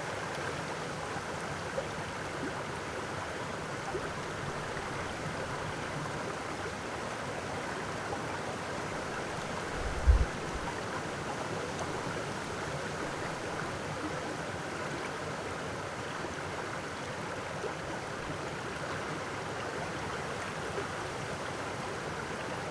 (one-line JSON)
{"title": "Trehörningsjö, vattenströmmar - water streams", "date": "2010-07-18 18:50:00", "description": "Water streaming in the rapids Husån, at the location where it was a water power plant around 80 years ago (at the location there exists a lot of material from this old time but the old building is not there anymore, just the metal industrial objects and some old burned house material. Recording was made during the soundwalk on World Listening Day, 18th july 2010.", "latitude": "63.69", "longitude": "18.85", "altitude": "160", "timezone": "Europe/Stockholm"}